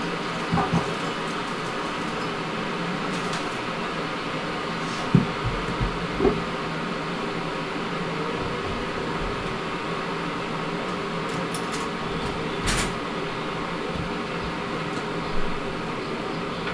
the early morning routine ... lights ... radio ... kettle ... pills ... tea ... cereal ... download ... Luhd binaural mics in binaural dummy head ... bird calls ... mew gulls on replay on Rad Ap ... blackbird song ... clock which 'sings' the hours ...
Helperthorpe, Malton, UK, 8 January 2019